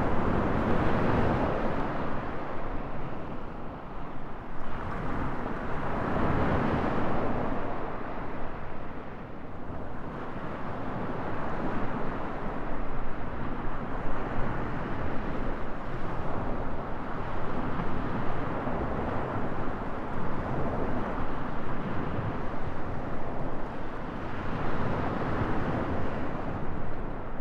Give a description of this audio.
Mediterranean Sea on the beach at noontime. Only a slight breeze. The place is called Wave-Beach by the locals, due to the sea usually building up high waves on this side of the island. Not so on this day which made recording possible. Binaural recording. Artificial head microphone set up on the ground, about four meters away from the waterline using an umbrella as windshelter. Microphone facing north west .Recorded with a Sound Devices 702 field recorder and a modified Crown - SASS setup incorporating two Sennheiser mkh 20 microphones.